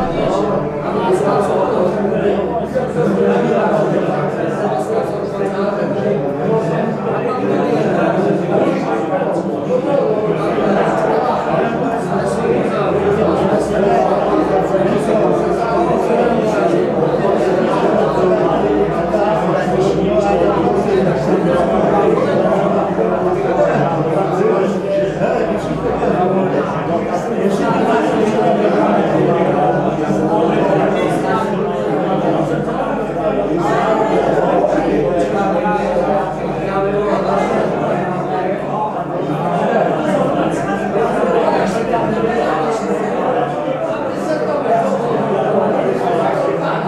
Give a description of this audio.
Český Krumlov, Tschechische Republik, Restaurace U Zelené Ratolesti, Plešivec 245, 38101 Český Krumlov